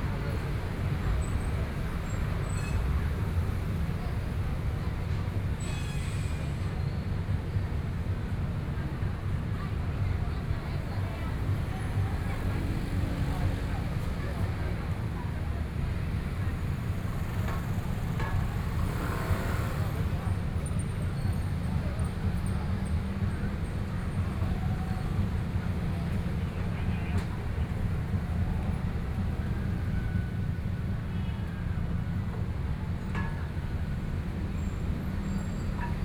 Luzhou, New Taipei City - Crossroads Edge
Crossroads Edge, Traditional temple parading, Traffic Noise, Binaural recordings, Sony PCM D50 + Soundman OKM II
New Taipei City, Taiwan, 22 October